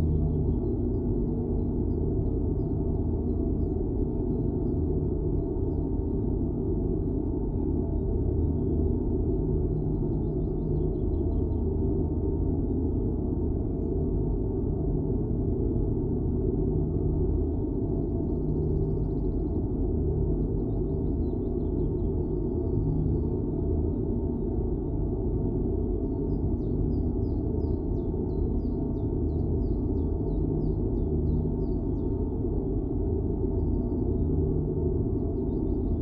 Königsheide, Berlin, Deutschland - well, Brunnen 19
Berlin Königsheide, one in a row of drinking water wells, now suspended. Material resonances in the metal cover
(Sony PCM D50, DIY contact microphon)
30 April 2022, 12:35